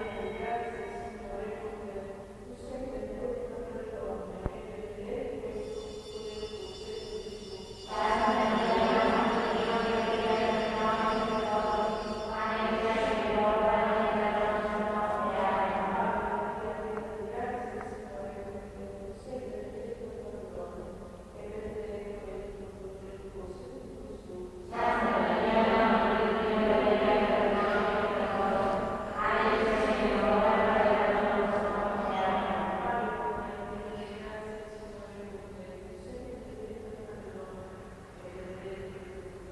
Catania, IT, San Benedetto - Nuns praying
The perpetual prayers to the Blessed Sacrament of the Benedictine nuns of San Benedetto.
Tascam RD-2d, internal mics.